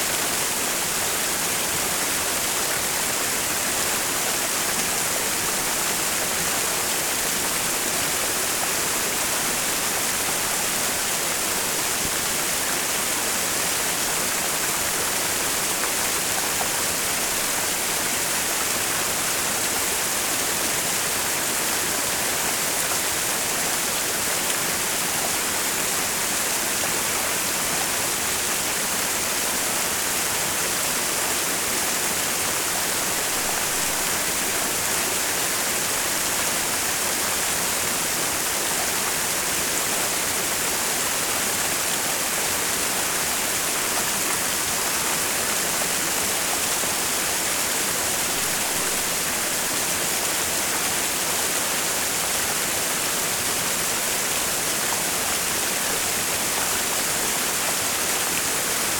pohorje waterfall from above - pohorje waterfall from the base
the waterfall recorded from another small bridge crossing directly under its base